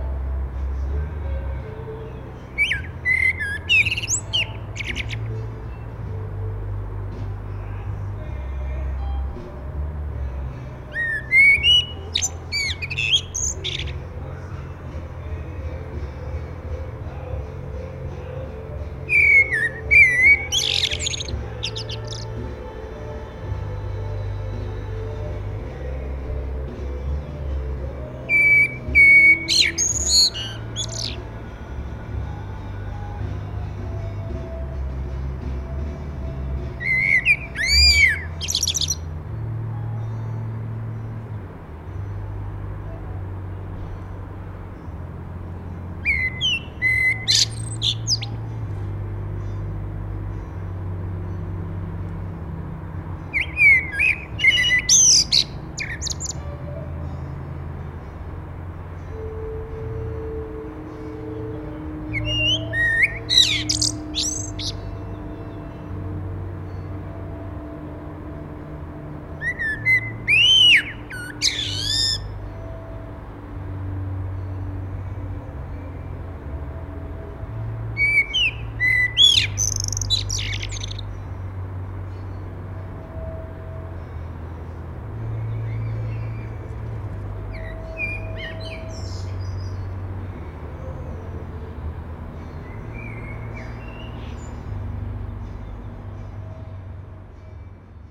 Háaleiti, Reykjavik, Iceland - The rock band and the singer
Rock band was playing somewhere in the neighborhood. Suddenly a Common Blackbird with a nest in a nearby garden arrived and started to sing. First gently as he was shy but suddenly just before the band started to play Jimmy Hendrix and Janis Joplin the bird began to sing very loud a fabulous song, something I have never heard it sing before, but this bird has been around my house for some years now.
This was recorded with Parabolic dish with Shure MX391/O capsules with Sound Professionals PIP-Phantom power adapter connected to Sound devices 744T recorder.
More information and longer version can be found here: